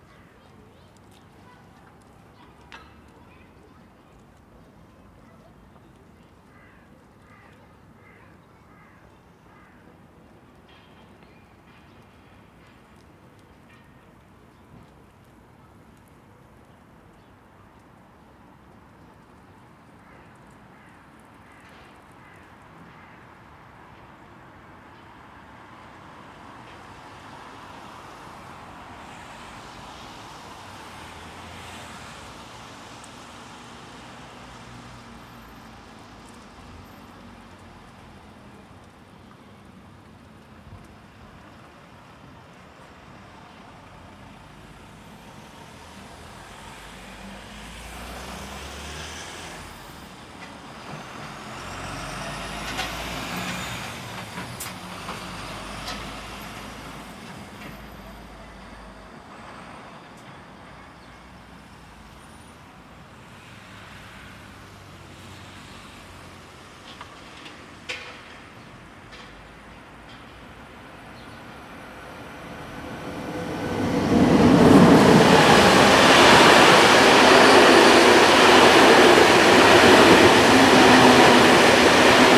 Chatelaine over the bridge, Chemin des Sports, Genève, Suisse - Chatelaine Over the Bridge 2
Dans le quartier de Châtelaine sur le pont où passe les trains pour l'aéroport. On On entend les écoliers, le train, les voitures et le chantier à côté.
In the Châtelaine district on the bridge where the trains to the airport pass. We can hear the schoolchildren, the train, the cars and the construction site nearby.
Rec H2n - processed
19 January 2021, 11:30